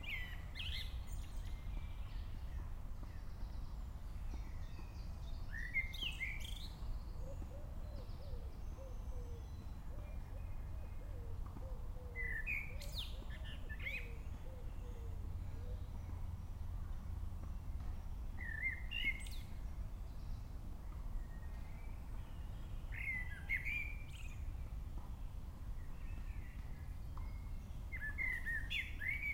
blackbird, evening - Köln, evening blackbird
"Stadtwald" park, Cologne, evening, may 29, 2008. - project: "hasenbrot - a private sound diary"